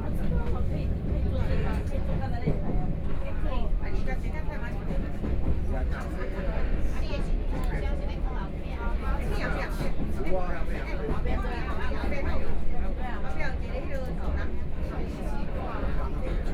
Pingtung County, Taiwan - Chu-Kuang Express
Chu-Kuang Express, from Pingtung station to Chaozhou station